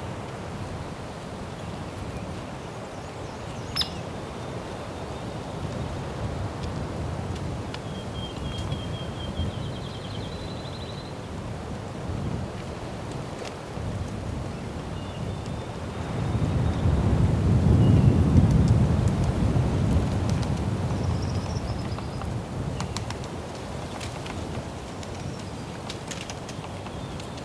Tall Grass Prairie - A woodpecker in the Tall Grass Prairie (Oklahoma)
Some birds, a woodpecker and light wind in the bush. Recorded in the Tall Grass Prairie Reserve, in Oklahoma. Sound recorded by a MS setup Schoeps CCM41+CCM8 Sound Devices 788T recorder with CL8 MS is encoded in STEREO Left-Right recorded in may 2013 in Oklahoma, USA.
11 May 2013, 12:00